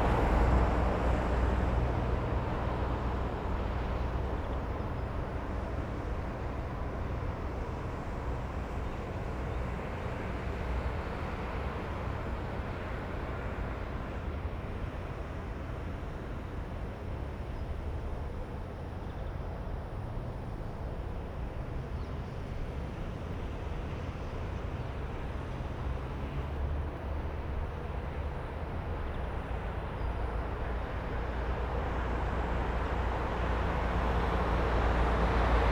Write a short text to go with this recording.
walk from KPN office to Maanweg. Traffic. Soundfield Mic (ORTF decode from Bformat) Binckhorst Mapping Project